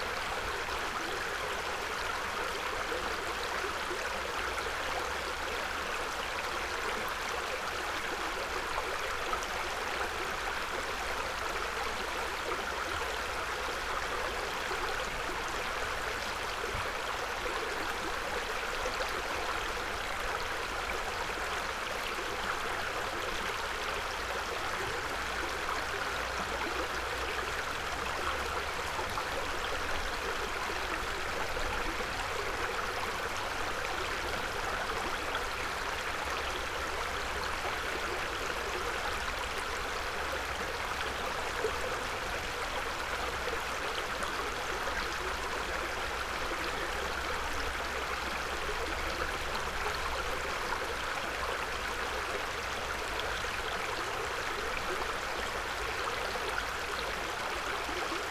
Villmar, Weyer - little creek
little creek near village Weyer